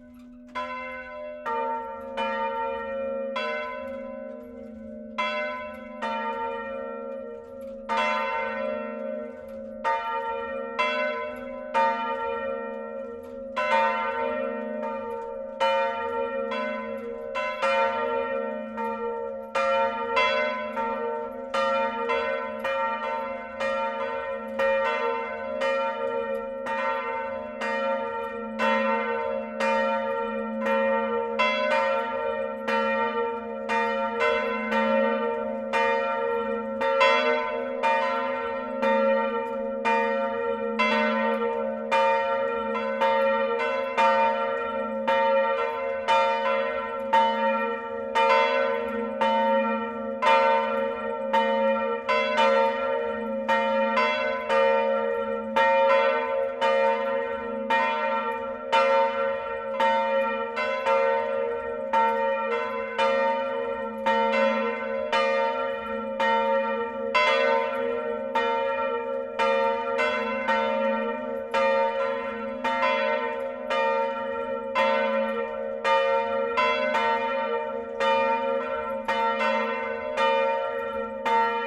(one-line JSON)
{"title": "Rue du Maréchal Foch, Brillon, France - Brillon (Nord) - église St-Armand", "date": "2021-03-15 14:00:00", "description": "Brillon (Nord)\néglise St-Armand\nVolée 2 cloches", "latitude": "50.44", "longitude": "3.33", "altitude": "20", "timezone": "Europe/Paris"}